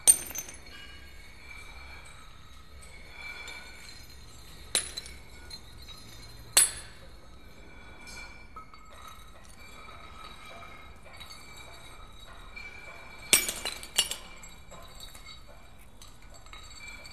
Sound action in meat factory ruins, Tartu, Estonia